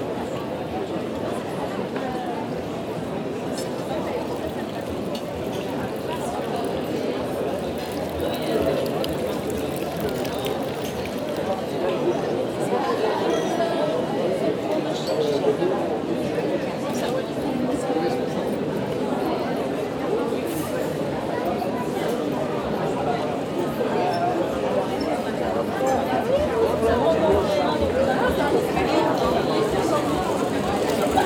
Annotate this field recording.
Sound of my city. In first stationary on the 3 first minutes, young people playing football. After, this is a walk into the city. You can hear all the bars, the restaurants, and simply people drinking beers or juices into the streets. Also young people cheating, a baby and a few tourists walking... This is a welcoming city. It's a quiet business day and everybody is easygoing.